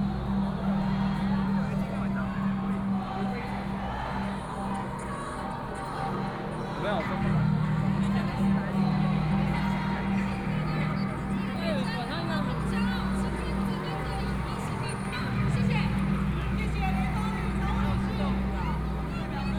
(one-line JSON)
{"title": "立法院, Taipei City - Walking through the site in protest", "date": "2014-03-19 22:04:00", "description": "Walking through the site in protest, People and students occupied the Legislature\nBinaural recordings", "latitude": "25.04", "longitude": "121.52", "altitude": "10", "timezone": "Asia/Taipei"}